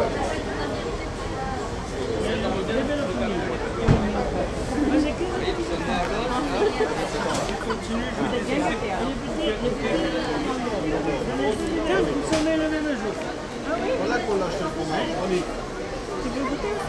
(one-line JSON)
{"title": "Saint-jean-pied-de-port (marché)", "date": "2010-07-12 11:32:00", "description": "public market, marché", "latitude": "43.16", "longitude": "-1.24", "altitude": "172", "timezone": "Europe/Paris"}